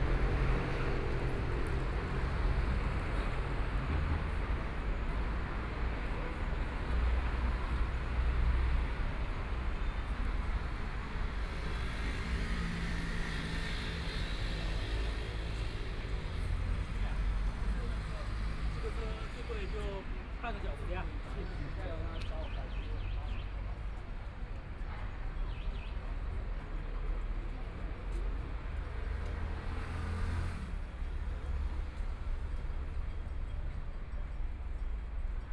河南中路, Zhabei District - the Street
in the Street, Walking toward the direction of the river bank, traffic sound, Binaural recording, Zoom H6+ Soundman OKM II